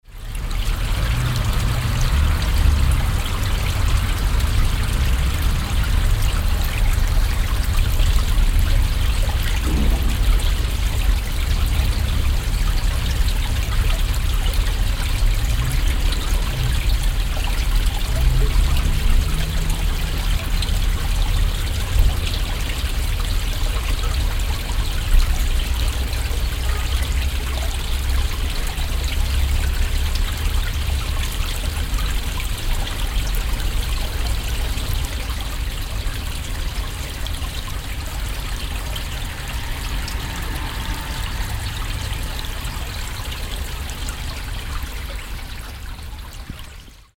{
  "title": "haan, stadtpark, teichabfluss",
  "description": "teichabfluss des künstlichen angelegten städtischen wasser parks- mittags - im hintergrund verkehrsgeräusche der schillerstrasse\n- soundmap nrw\nproject: social ambiences/ listen to the people - in & outdoor nearfield recordings",
  "latitude": "51.19",
  "longitude": "7.01",
  "altitude": "156",
  "timezone": "GMT+1"
}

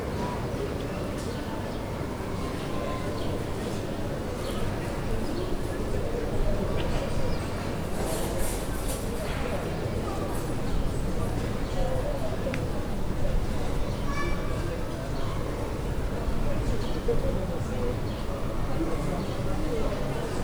{
  "title": "Rue de la Boulangerie, Saint-Denis, France - Park opposite Médiatheque Centre Ville",
  "date": "2019-05-25 11:20:00",
  "description": "This recording is one of a series of recording, mapping the changing soundscape around St Denis (Recorded with the on-board microphones of a Tascam DR-40).",
  "latitude": "48.94",
  "longitude": "2.36",
  "altitude": "33",
  "timezone": "GMT+1"
}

